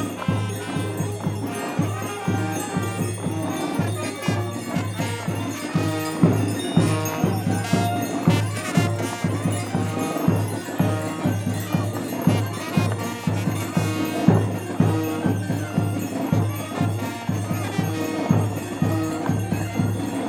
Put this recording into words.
This long recording is about the Gilles de Binche. It's a traditional carnaval played in some parts of Belgium. People wear very special costumes, Wikipedia describes : made with a linen suit with red, yellow, and black heraldic designs. It's trimmed with large white-lace cuffs and collars. The suit is stuffed with straw, giving the Gille a hunched back. These Gilles are playing music and dancing in the streets, throwing oranges on everybody, on cars, in the houses if windows are open. It's very noisy and festive. Some of the Gilles wear enormous, white, feathered hats. Above all, the Gilles de Binche are EXTREMELY DRUNK ! It's terrible and that's why the fanfare is quite inaudible ! But all this takes part of our heritage. At the end of the day, they can't play anything, they yell in the streets and they piss on the autobus !! These Gilles de Binche come from La Louvière and they are the Gilles de Bouvy troop.